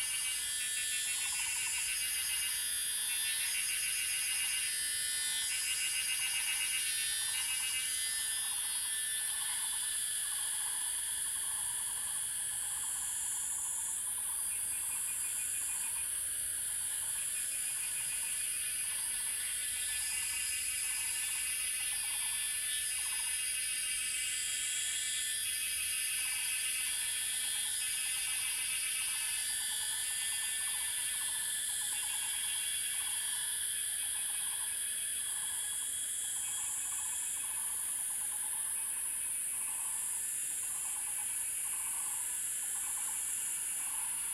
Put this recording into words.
In the woods, Cicadas cry, Bird sounds, Zoom H2n MS+XY